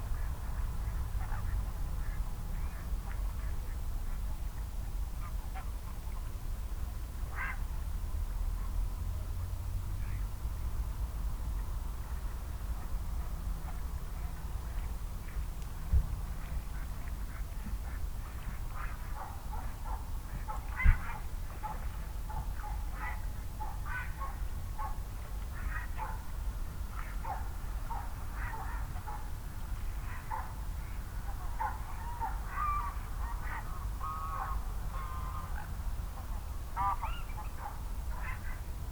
lancken-granitz: neuensiener see - the city, the country & me: evening ambience
sheep, wild geese, ducks, barking dogs and other busy animals
the city, the country & me: march 5, 2013
5 March, 19:06